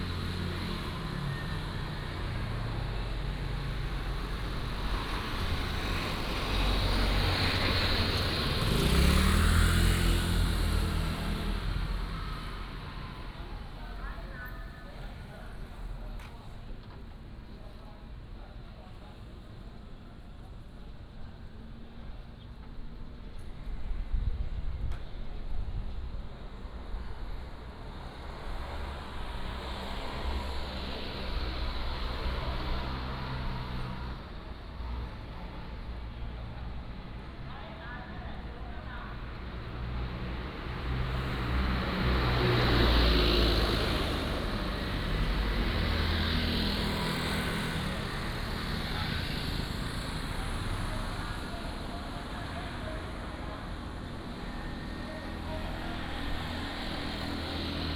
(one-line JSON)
{"title": "湖西村, Huxi Township - In the street", "date": "2014-10-21 12:46:00", "description": "In the street, Traffic Sound, next to the convenience store", "latitude": "23.58", "longitude": "119.66", "altitude": "8", "timezone": "Asia/Taipei"}